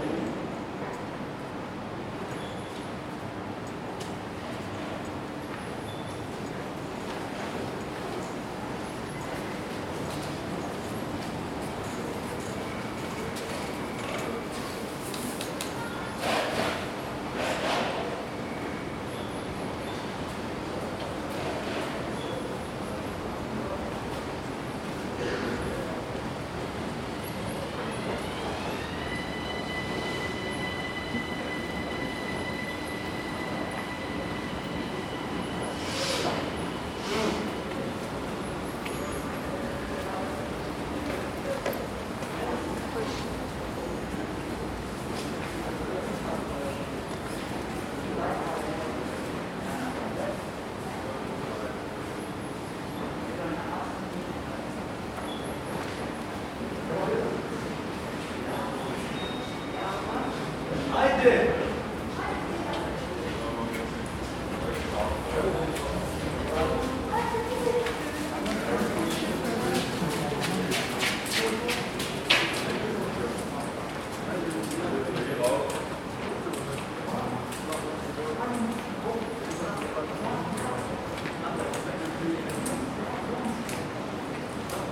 Hütteldorf U-Bahn, Bahnhof, Wien, Österreich - underpass
underpass sounds and noises then entering elevator to platform at Bahnhof Hütteldorf Vienna